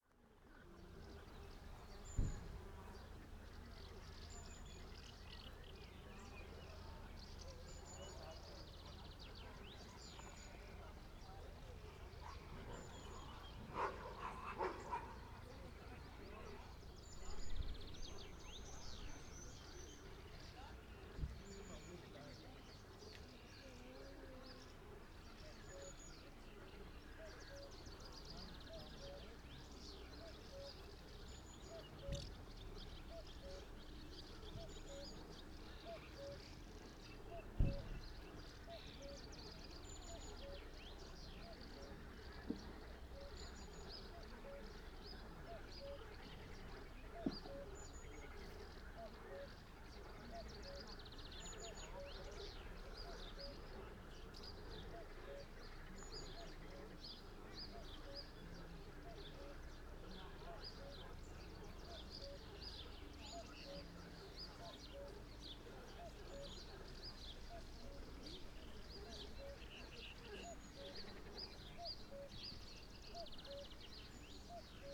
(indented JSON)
{
  "title": "Groß Neuendorf, Oder - Ufer / river bank",
  "date": "2010-05-23 12:40:00",
  "description": "am Ufer / river bank, insects, frogs, birds, people talking, ambient",
  "latitude": "52.70",
  "longitude": "14.41",
  "altitude": "9",
  "timezone": "Europe/Berlin"
}